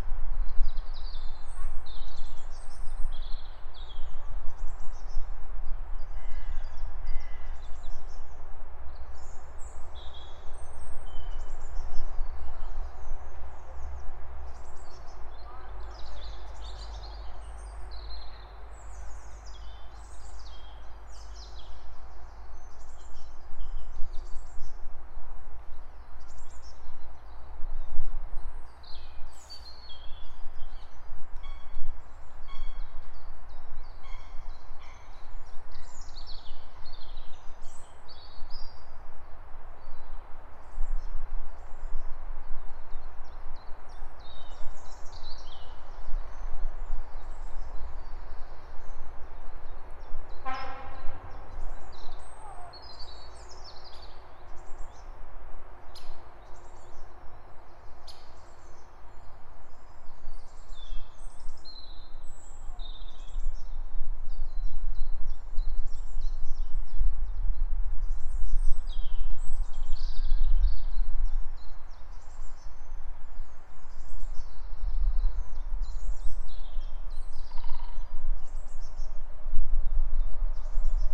Marlotpad, Den Haag, Nederland - Bi9rd at the Marlot Park
Birds at the Marlot PArk. Recorded with a Tascam DR100-MK3
23 April 2020, 13:31